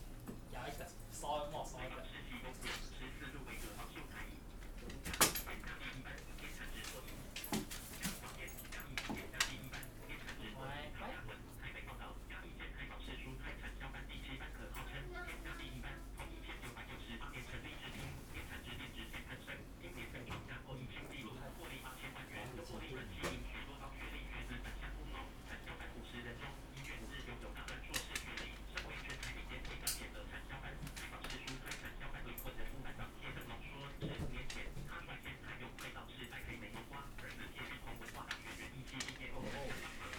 Taishan District, New Taipei City - Guide dog and owner
Guide dog and owner, Binaural recordings, Zoom H6+ Soundman OKM II